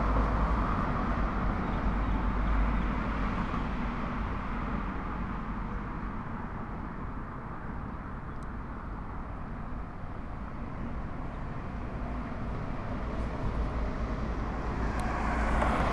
Blvd. Mariano Escobedo Ote., León Moderno, León, Gto., Mexico - Tráfico en Mariano Escobedo en el primer día de fase 3 de COVID-19.
Traffic in Mariano Escobedo on the first day of phase 3 of COVID-19.
This is a boulevard with a lot of traffic. Although there are several vehicles passing in this quarantine, the difference in vehicle flow is very noticeable.
(I stopped to record while going for some medicine.)
I made this recording on April 21st, 2020, at 2:07 p.m.
I used a Tascam DR-05X with its built-in microphones and a Tascam WS-11 windshield.
Original Recording:
Type: Stereo
Este es un bulevar con mucho tráfico. Aunque sí hay varios vehículos pasando en esta cuarentena, sí se nota mucho la diferencia de flujo vehicular.
(Me detuve a grabar al ir por unas medicinas.)
Esta grabación la hice el 21 de abril 2020 a las 14:07 horas.
Guanajuato, México, April 2020